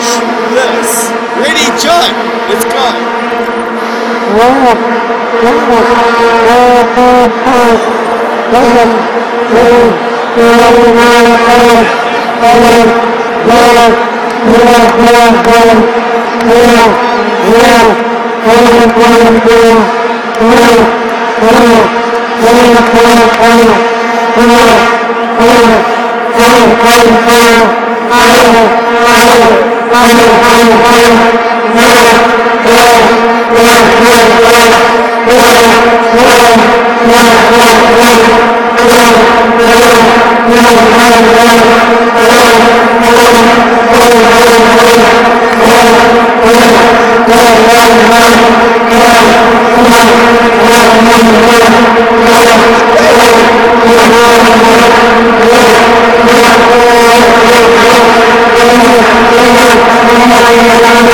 Soccer City, Johannesburg, Vuvuzela chant
Vuvuzela chant - holland vs Denmark:Darren, Brad, Jon and Nix get the crowd going
Johannesburg, South Africa, 15 June 2010